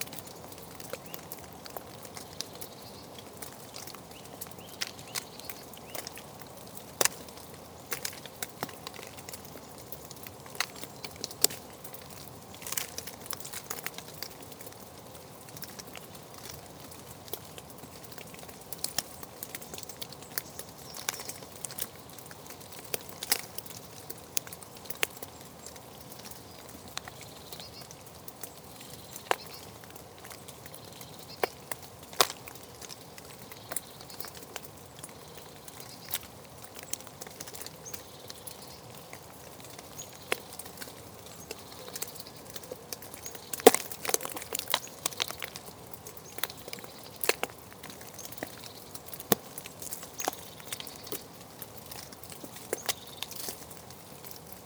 At the end of the day, the snow is melting below a majestic beech tree. Recorder hidden in a hole, into the tree, and abandoned alone.
Very discreet : Long-tailed Tit, Common Wood Pigeon, European Green Woodpecker.
Ottignies-Louvain-la-Neuve, Belgium, 2 February 2019, ~18:00